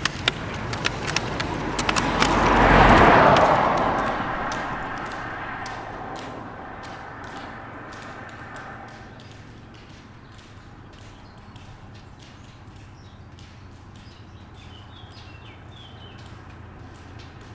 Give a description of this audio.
Au bord de la RD 991 dans la côte de Groisin passage de groupes de skieuses à roulettes sur la bande cyclable, bruits de bâtons .